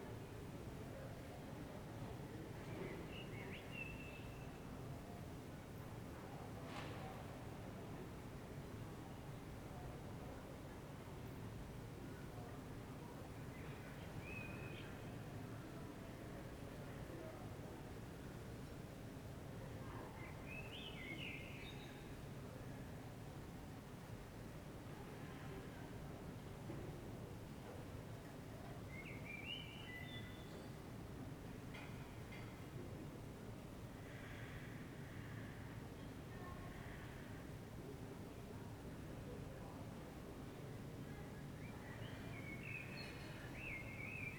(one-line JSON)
{"title": "Ascolto il tuo cuore, città. I listen to your heart, city. Several chapters **SCROLL DOWN FOR ALL RECORDINGS** - Terrace at sunset last April day in the time of COVID19 Soundscape", "date": "2020-04-30 20:25:00", "description": "\"Terrace at sunset last April day in the time of COVID19\" Soundscape\nChapter LXI of Ascolto il tuo cuore, città. I listen to your heart, city\nThursday April 30th 2020. Fixed position on an internal terrace at San Salvario district Turin, fifty one after emergency disposition due to the epidemic of COVID19.\nStart at 8:25 p.m. end at 8:58 p.m. duration of recording 33'33'', sunset time at 8:37 p.m.", "latitude": "45.06", "longitude": "7.69", "altitude": "245", "timezone": "Europe/Rome"}